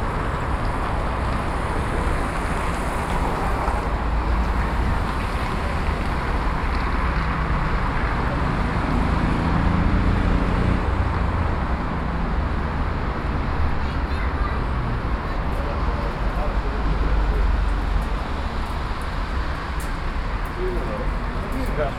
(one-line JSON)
{"title": "Oslo, Bispegata, Road traffic", "date": "2011-06-05 19:28:00", "description": "Norway, Oslo, road traffic, cars, buses, binaural", "latitude": "59.91", "longitude": "10.75", "altitude": "7", "timezone": "Europe/Oslo"}